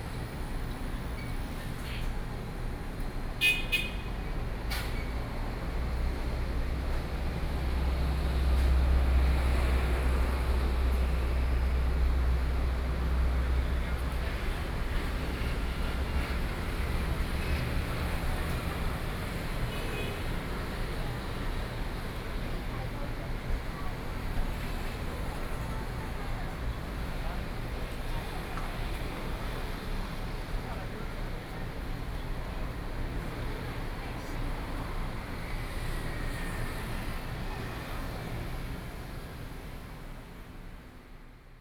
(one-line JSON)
{"title": "Zhongzheng Road, Zhongli City - Intersection", "date": "2013-08-12 14:41:00", "description": "The corner of the road, Traffic Noise, Zoom H4n + Soundman OKM II", "latitude": "24.96", "longitude": "121.22", "timezone": "Asia/Taipei"}